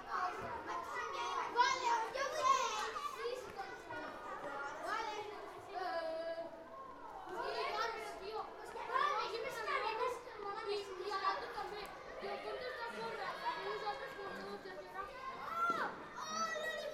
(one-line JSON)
{"title": "Plaça de Sant Felip Neri", "date": "2011-01-24 13:05:00", "description": "Kids having freetime before lunch in a public square, famous for its historical influence. In this square, during the civil war, people was executed by firing squad.", "latitude": "41.38", "longitude": "2.18", "altitude": "28", "timezone": "Europe/Madrid"}